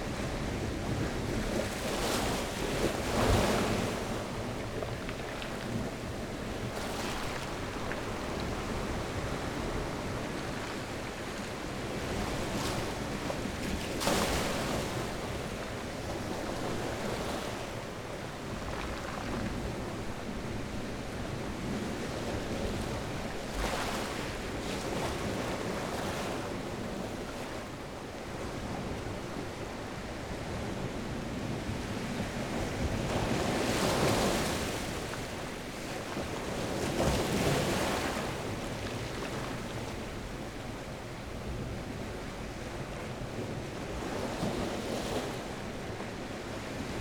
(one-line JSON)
{"title": "Puerto De Sardina, Gran Canaria, waves on stones", "date": "2017-01-26 13:20:00", "latitude": "28.15", "longitude": "-15.70", "altitude": "9", "timezone": "GMT+1"}